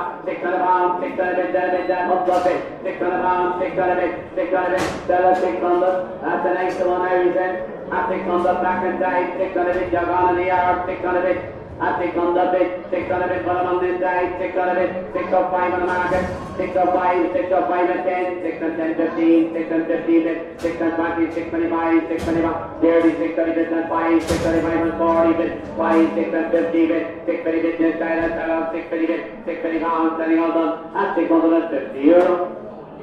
Drumshanbo, Co. Leitrim, Ireland - The Sunken Hum Broadcast 75 - The Meditative Sounds of The Drumshanbo Cattle Mart - 16 March 2013
Took a stroll down to the ole' evening cattle mart in Drumshanbo. The auctioneers voice is like a meditative chant or a call to pray. I had never been to the mart before and soon noticed I was the only gal in the building (until the very end when a very well dressed nine year old mini-farmer came in with her dad).
We sat and watched this fella auctioning cattle for about an hour and he didn't stop going once. The whole time he does his chant his leg beats up and down to the rhythm of his voice. Eventually I felt like getting up and doing a little dance. But I constrained myself.
Recorded with a Zoom H4